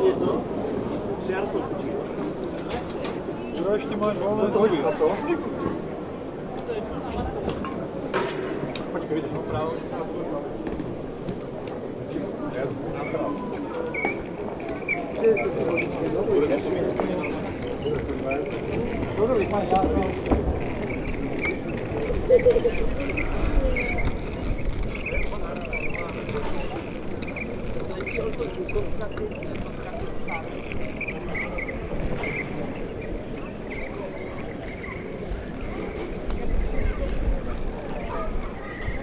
nadvori Hradu
Military music corpse leaving after the concert